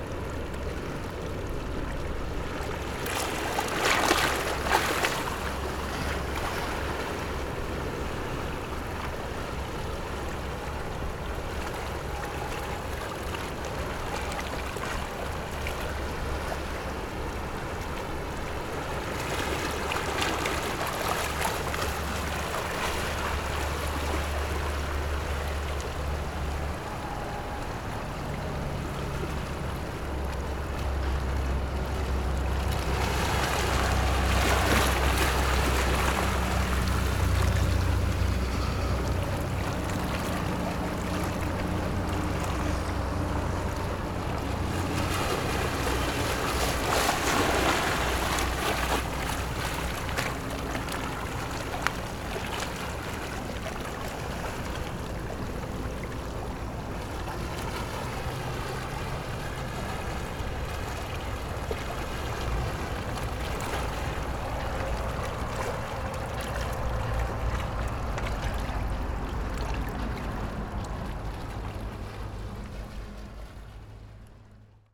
萊萊地質區, Gongliao District - waves
Rocks and waves, Very hot weather, Traffic Sound
Zoom H6 MS+ Rode NT4